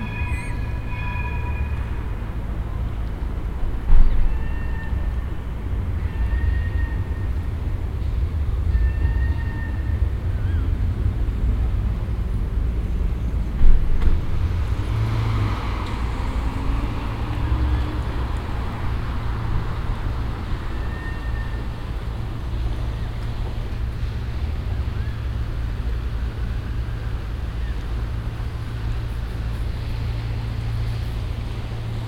amsterdam. herengracht, water birds and a boat
a water bird family passing by on the herengracht channel followed by a classical amsterdam channel motor boat
international city scapes - social ambiences and topographic field recordings